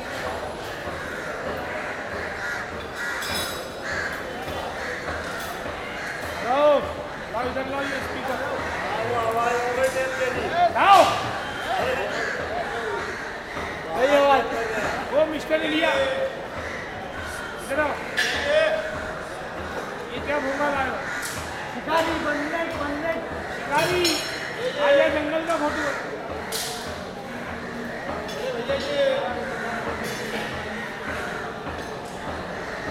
Crawford Meat Market Bombay
Ambiance intérieur - marché aux viandes